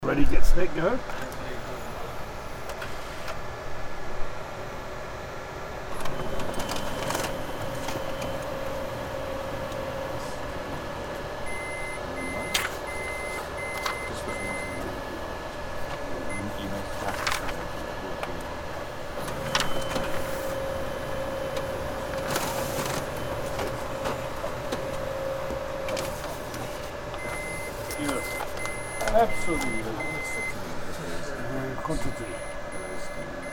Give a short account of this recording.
The Academy of Design is a private tertiary institution offering bachelor degree awards in graphic design, fashion, advertising, photo-media, and visual arts. Consequently, it's an institution in which the 'visual' is most definitely privileged. The Academy is where I lecture in visual culture studies and art history, but I am also passionate about phonography. This year, World Listening Day was an opportunity for me to pay respects to the Academy's soundscape.